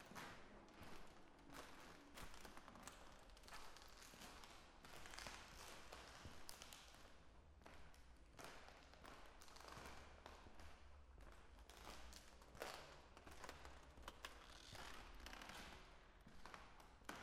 Rijeka, Governor’s Palace, RoomWalk
international conference - PS
(1oo years)